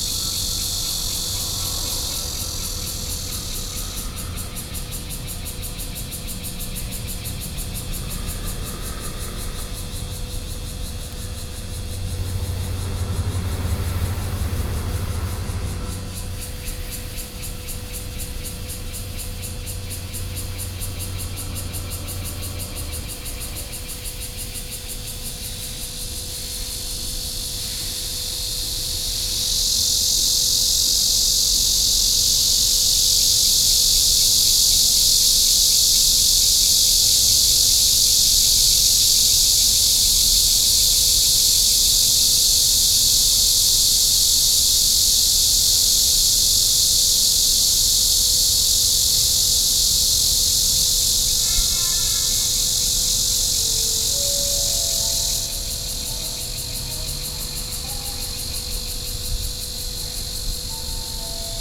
Ln., Museum Rd., Bali Dist. - In the parking lot
In the parking lot, Close factories, Cicadas cry, Hot weather
Sony PCM D50+ Soundman OKM II
4 July 2012, 13:15